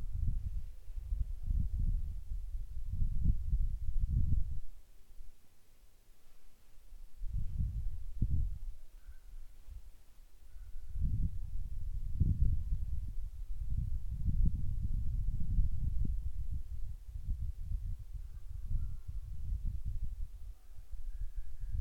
La Paz, Bolivia - Mallasa
por Fernando Hidalgo
Our Lady of Peace, Bolivia, 9 December 2012, 11:16